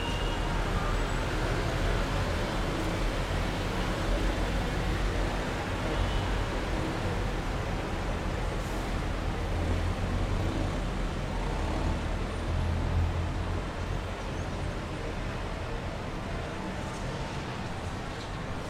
Cra., Medellín, Belén, Medellín, Antioquia, Colombia - La 30 A
Principalmente se escucha el ruido de automóviles, motos y buces. Se escucha el sonido de motores y del viento, pitos de diferentes vehículos. Se alcanza a oír como algunas personas hablan. Se alcanza a escuchar música. Silbido.
1 September 2022